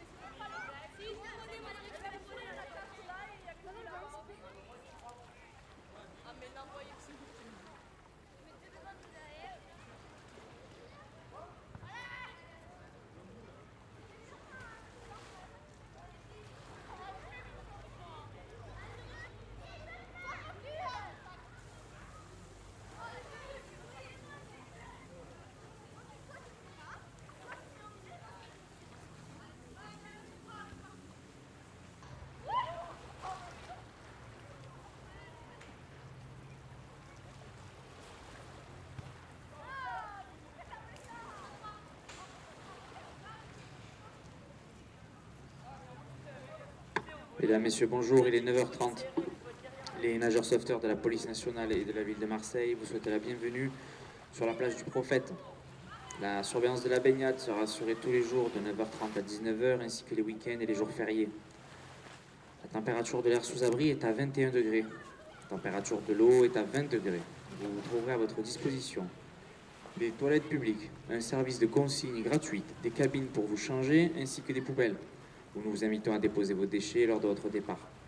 Marseille
Plage du prophète
Ambiance du matin à l'heure de l'ouverture des activités nautiques
France métropolitaine, France, 21 August 2019